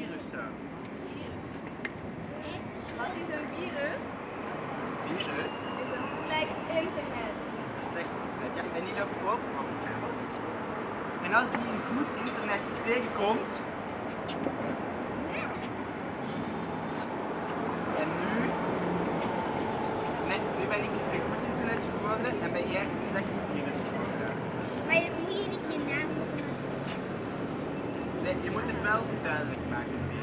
Children playing at the square, people enjoy the sun, traffic noise.
13 October 2018, ~14:00